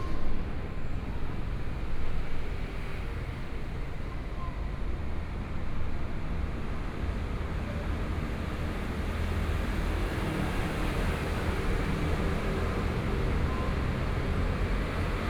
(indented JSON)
{
  "title": "Zhongyuan bridge, Jungli City - Traffic Noise",
  "date": "2013-09-16 14:24:00",
  "description": "Traffic Noise, Factory noise, Train traveling through, Sony PCM D50+ Soundman OKM II",
  "latitude": "24.96",
  "longitude": "121.23",
  "altitude": "138",
  "timezone": "Asia/Taipei"
}